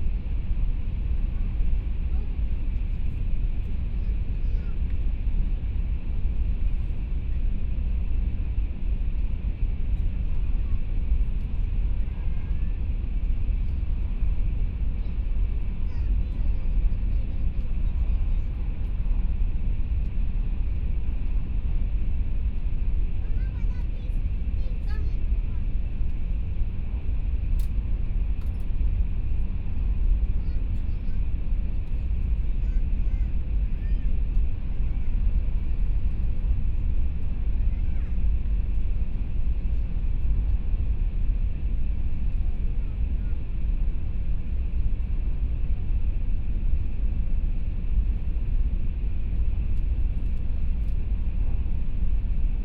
Taiwan High Speed Rail, from Taichung Station To Chiayi, Binaural recordings, Zoom H4n+ Soundman OKM II